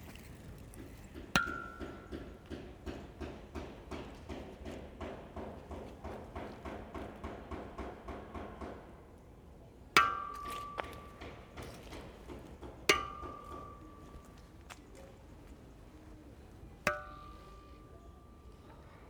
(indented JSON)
{
  "title": "Stallschreiberstraße, Berlin, Germany - Exploring the musical bike stands with the palm of my hand",
  "date": "2020-11-07 15:03:00",
  "description": "The cranes on the Google map are no longer there, but these are very new apartments. People are still moving in. The sounds of work inside still continues. The buildings surround a long narrow garden full of exotic plants and areas of different surfaces – gravel, small stones, sand – for walking and for kids to play. Perhaps this is Berlin's most up-to-date Hinterhof. There is 'green' design in all directions, except perhaps underfoot - surely grass would be nicer than so much paving. The many bike stands are all metal that ring beautifully when hit by hand. Together with the resonant railings they are an accidental musical instrument just waiting to be played.",
  "latitude": "52.51",
  "longitude": "13.41",
  "altitude": "36",
  "timezone": "Europe/Berlin"
}